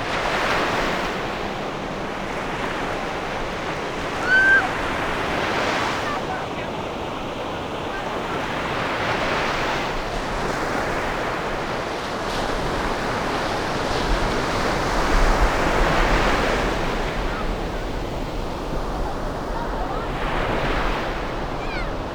福隆海水浴場, New Taipei City - Beach